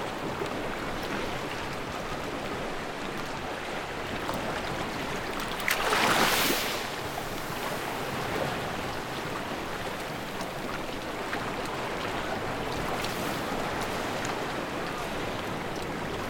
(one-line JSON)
{"title": "Ajaccio, France - Capo Di Feno 02", "date": "2022-07-28 20:30:00", "description": "Beach Sound\nCaptation ZOOM H6", "latitude": "41.93", "longitude": "8.62", "timezone": "Europe/Paris"}